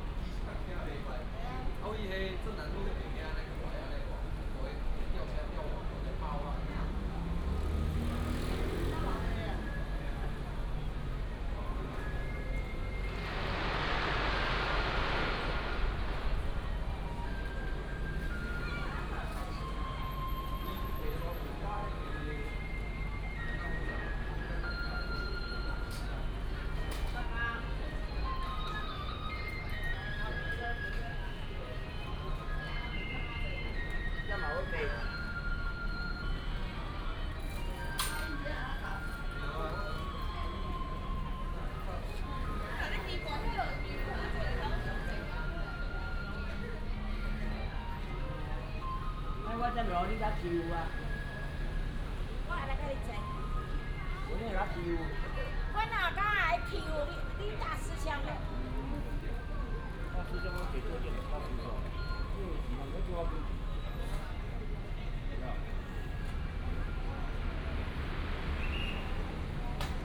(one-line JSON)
{"title": "Sec., Taiwan Blvd., Shalu Dist. - At the corner of the road", "date": "2017-02-27 09:52:00", "description": "Firecrackers and fireworks, Traffic sound", "latitude": "24.24", "longitude": "120.56", "altitude": "14", "timezone": "Asia/Taipei"}